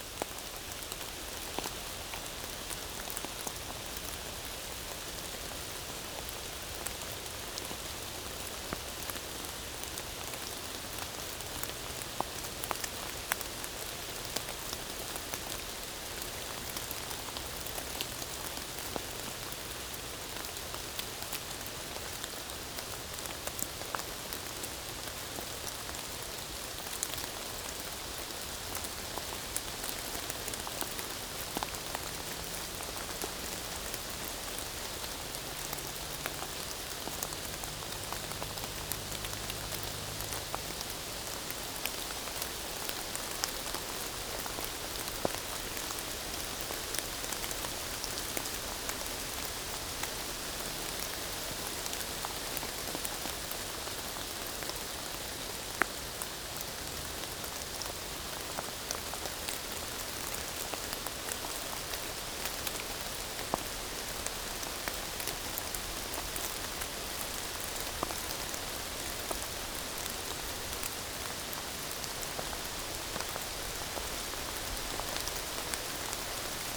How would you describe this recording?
Rain is falling in the woods. It's a soft ambiance, even if this rain is quite boring for us.